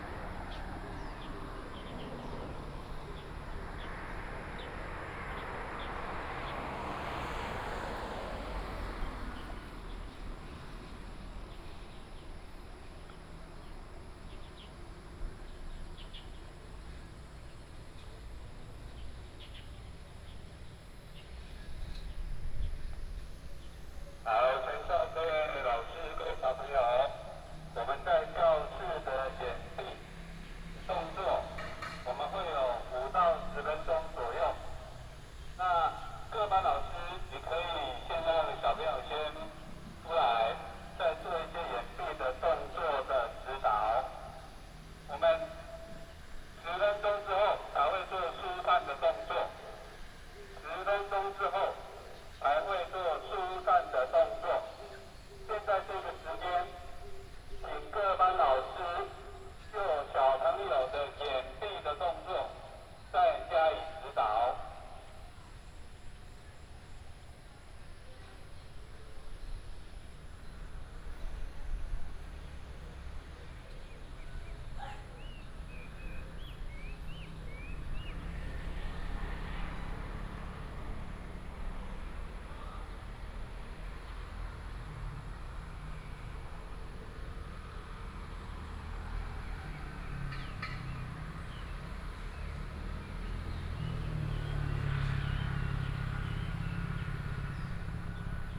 三灣國小, Sanwan Township - In the square of the temple
School for earthquake drills, Bird call, Traffic sound, Binaural recordings, Sony PCM D100+ Soundman OKM II
Sanwan Township, Miaoli County, Taiwan, 15 September 2017, ~08:00